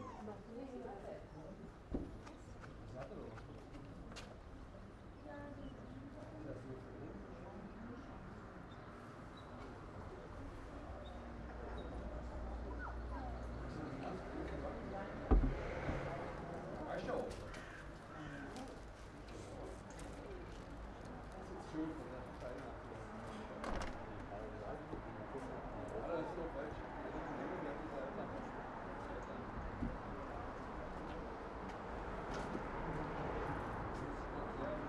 Walter-Friedrich-Straße, Berlin Buch - outside cafe ambience
in front of a bakery cafe
(Sony PCM D50)
Berlin, Germany